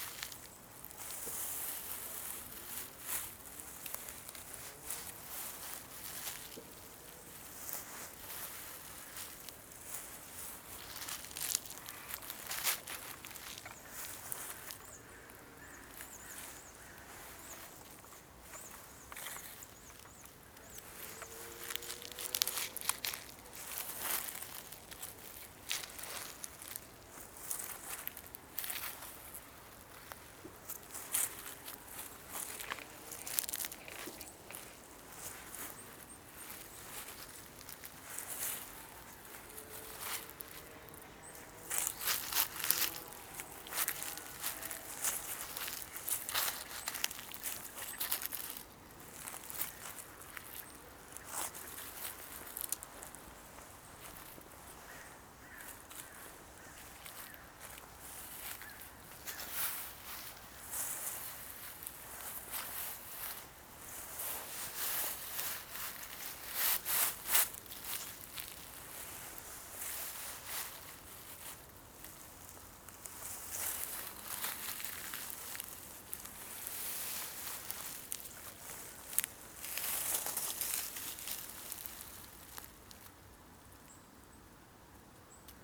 Wet zones, Pavia, Italy - Grounding on the dead leaves
few steps barefoot on oak dead leaves, acorns and brushes
Pavia, Province of Pavia, Italy, November 2012